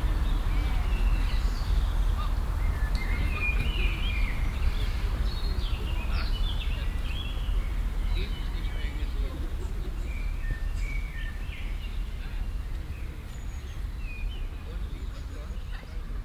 cologne, stadtgarten, frisbeespiel auf wiese
stereofeldaufnahmen im mai 08 - mittags
project: klang raum garten/ sound in public spaces - in & outdoor nearfield recordings
stadtgarten, park, grosse wiese, 7 May 2008